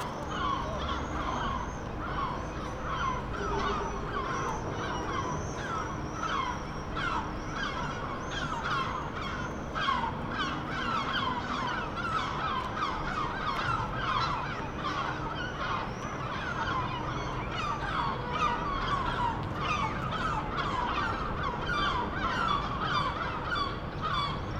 Tallinn, Hobujaama - concert and birds
tallinn, hobujaama, excited birds circle over an old building where norwegian composer maja ratkje is giving a high volume concert
Tallinn, Estonia